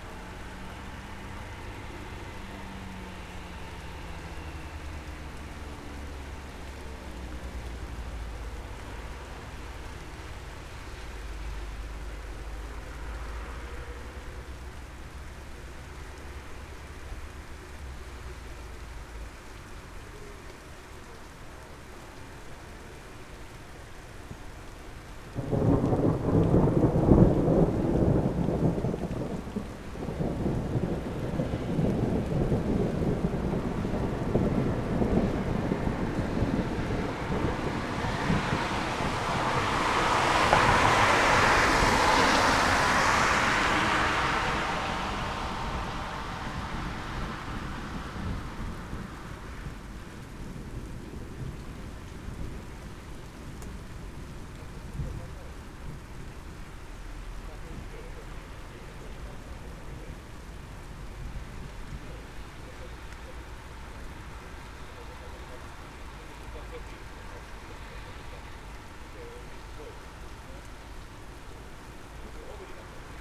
Some distant and semi-loud thunder and rain recorded from my window. Lot's of cars driving by. Zoom H5, default X/Y module.
Manner-Suomi, Suomi, 9 July 2020